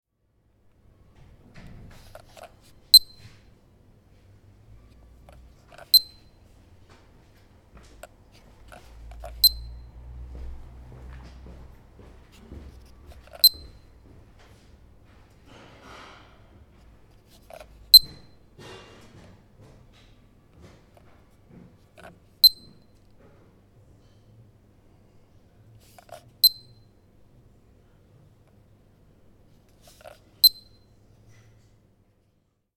17.11.2008 15:00 nach drehen des würfels (piep) wird jeweils eine andere funktion aktiviert (temperatur-, zeit-, datums-anzeige, wecker) / multi mode cube, displays time, date, temperature, makes a little sound when turning